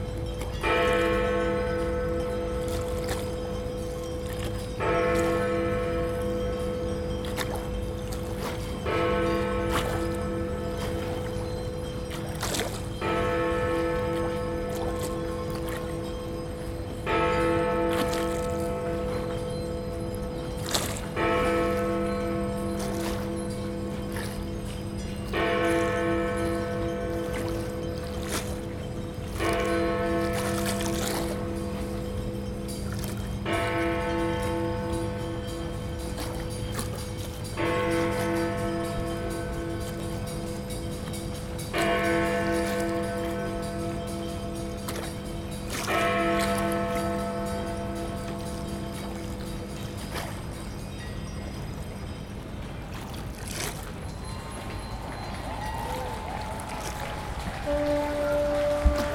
This rare occurrence happened on the first day of the 2012 London Olympics at 12 minutes past eight in the morning, exactly 12 hours before a Red Arrows fly by at the Olympic Park at 20:12 hours. (The official ceremony started at 21:00).
London, UK